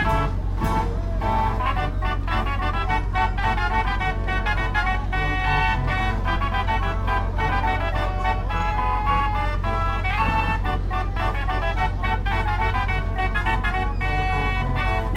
A chance encounter with an old fashioned fair on Palmer Park. Art Deco arcade machines, a creaky old Waltzer, and an old fairground organ belting out tunes on the carousel. Stood and listened in the sunshine until Mark got bored of waiting! I love the sound, it reminds me of being very young and going to the Beamish steam fair with my parents and grandparents.
19 April 2014, 16:26